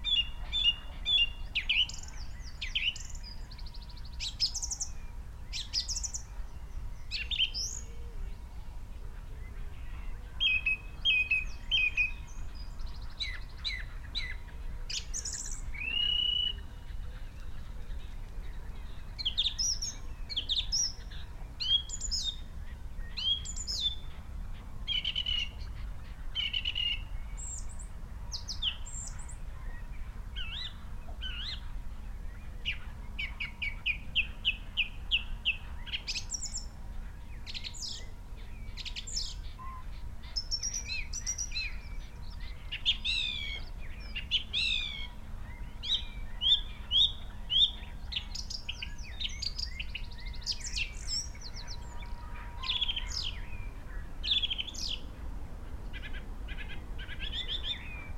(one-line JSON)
{"title": "Green Ln, Malton, UK - song thrush soundscape ...", "date": "2020-03-17 06:25:00", "description": "Song thrush soundscape ... XLR mics in a SASS to Zoom H5 … starts with blackbird … song thrush commences at four minutes … ish … crows at 27 mins … bird call … song … tawny owl … wood pigeon … skylark … pheasant … red-legged partridge … blackbird … robin … crow … wren … dunnock … some background noise ...", "latitude": "54.13", "longitude": "-0.55", "altitude": "83", "timezone": "Europe/London"}